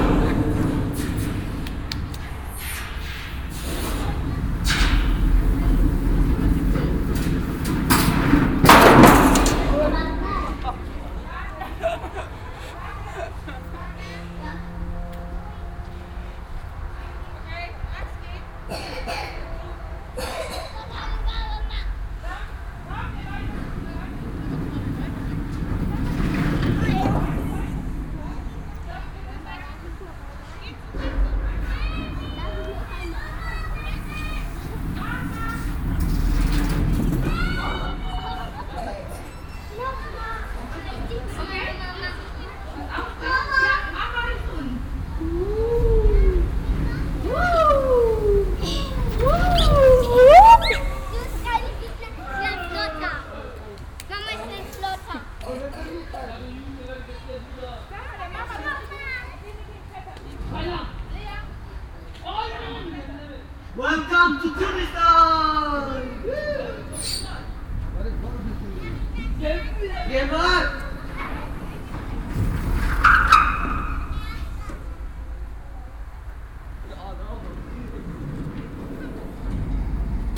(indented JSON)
{
  "title": "duisburg, zechengelände, tunnelrutsche",
  "description": "tunnelrutsche zwischen den ehemaligen lagerbehältern auf dem duisburger zechengelände. donnernde metallresonanzen, fahrtwind, johlende und kreischende stimmen, ausrufe zweier türkischer jugendlicher\nsoundmap nrw\nsocial ambiences/ listen to the people - in & outdoor nearfield recordings",
  "latitude": "51.48",
  "longitude": "6.78",
  "altitude": "32",
  "timezone": "GMT+1"
}